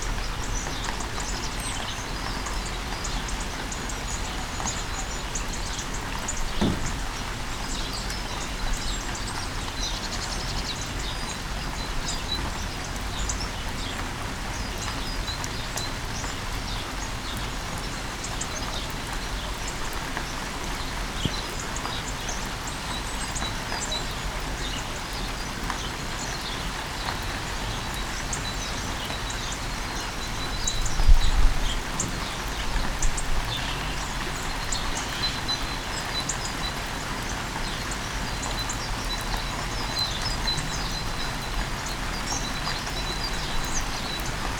Opatje selo, Miren, Slovenija - Rainy day in Opatje selo
Rainy day, bird's singing, the church bell strikes twice for the announcement of half an hour.
Recorded with ZOOM H5 and LOM Uši Pro, AB Stereo Mic Technique, 40cm apart.
1 March, ~12:00